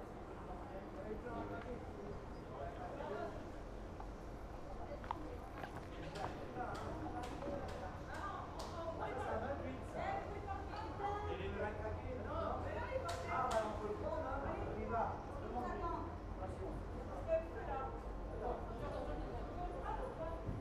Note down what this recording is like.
street ambience, tram 28 and cars waiting for passage, worker renovats a room, people walking by